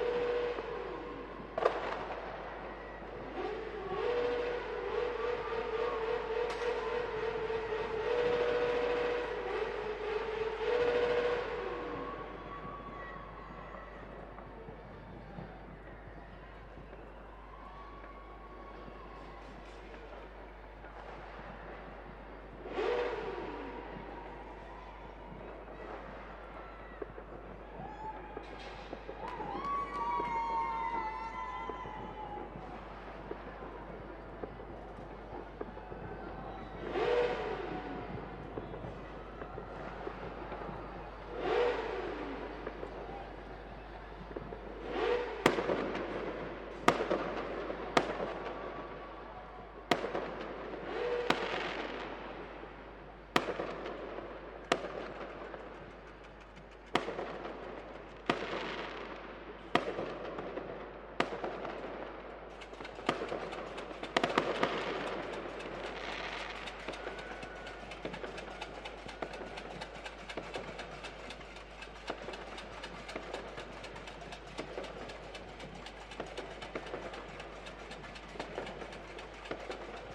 Lisbon, Portugal - New year 2016 celebrations
New year celebrations (2016), people shounting, kids yelling, motorcycle roaring, fireworks close and in the distance. Recorded in a MS stereo configuration (oktava MK012 cardioid mic + AKG CK94) into a Tascam Dr-70d.
Lisboa, Portugal, 1 January